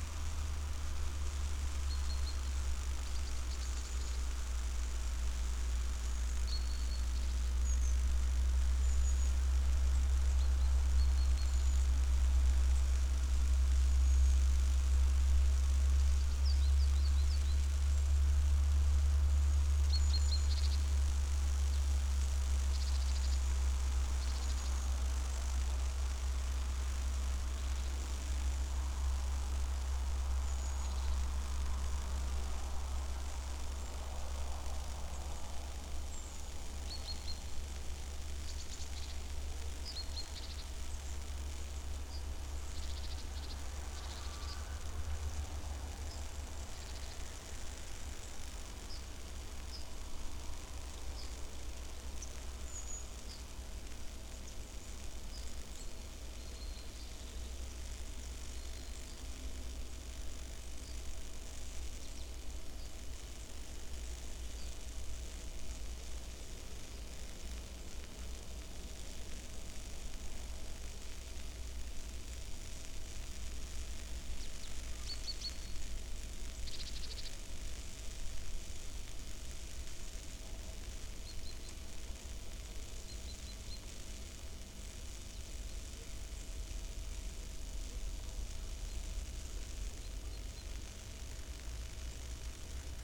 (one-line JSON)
{"title": "Lithuania, under high voltage line", "date": "2020-12-02 12:10:00", "description": "high voltage lines, cracking and crackling electricity in the wires", "latitude": "55.54", "longitude": "25.60", "altitude": "118", "timezone": "Europe/Vilnius"}